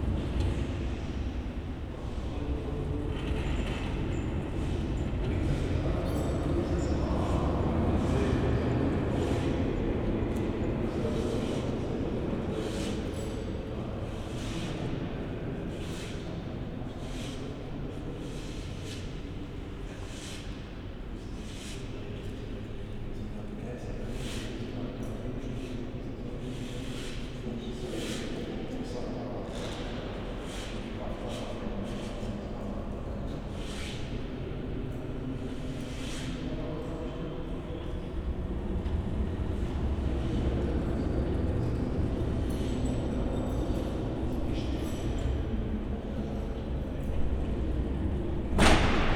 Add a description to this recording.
near one of the main entrance gates. (geek note: SD702 audio technica BP4025)